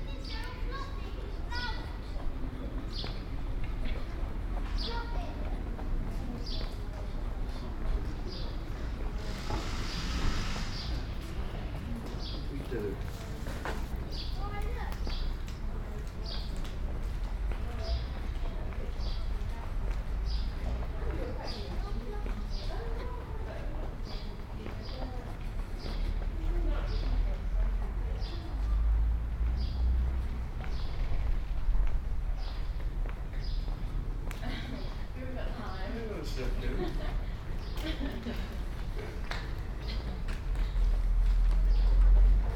Harbour Approach Rd, Folkestone, Regno Unito - GG Folkestone-Harbour-A 190524-h14
Total time about 36 min: recording divided in 4 sections: A, B, C, D. Here is the first: A.
Folkestone, UK, 24 May, 14:01